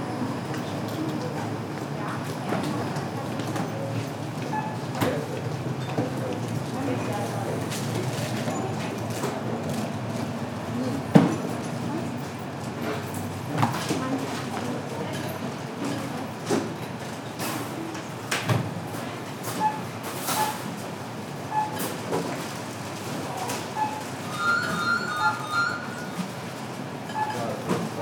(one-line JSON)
{"title": "Bahnhofs-Bismarckviertel, Augsburg, Germany - Atmosphere in store", "date": "2012-11-07 15:43:00", "latitude": "48.37", "longitude": "10.89", "altitude": "501", "timezone": "Europe/Berlin"}